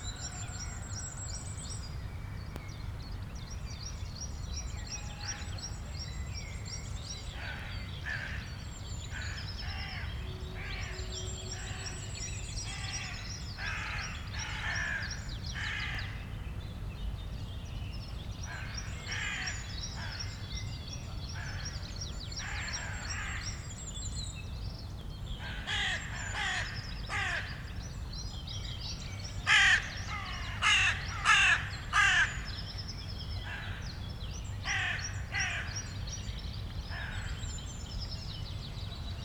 Berlin, Friedhof Lilienthalstr. - Easter morning cemetery ambience
Easter morning cemetery atmosphere on Friedhof Lilienthalstr., Berlin. Crows, ravens, tits and finches and a lot of other birds, church bells, people, dogs, aircraft and some strange clicks and pops, probably because the microphones are just lying around with not much care taken, moved by a gentle wind
(Tascma DR-100 MKIII, Primo EM272)
Deutschland, 18 April 2022, 09:45